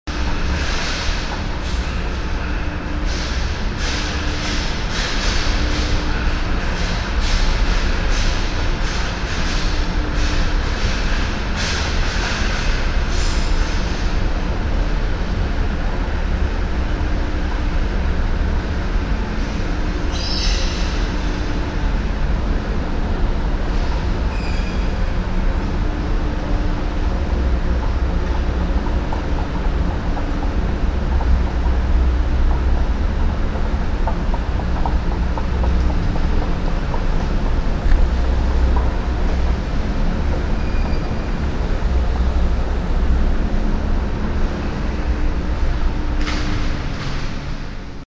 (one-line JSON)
{"title": "cologne, butzweilerhof, moving stairs, exit swedish furniture company", "date": "2009-07-05 11:55:00", "description": "not visible on the map yet - new branch house of a swedish furniiture company - here recording of the moving stairs at the exit\nsoundmap nrw: social ambiences/ listen to the people in & outdoor topographic field recordings", "latitude": "50.98", "longitude": "6.90", "altitude": "49", "timezone": "Europe/Berlin"}